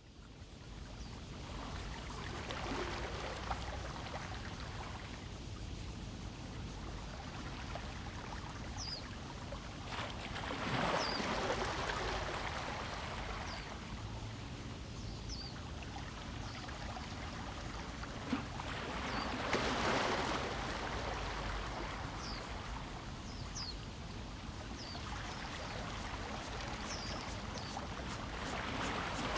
Tamsui District, Taiwan - Tide
Tide, In the dock
Zoom H2n MS+XY
New Taipei City, Taiwan, 18 July 2015, ~06:00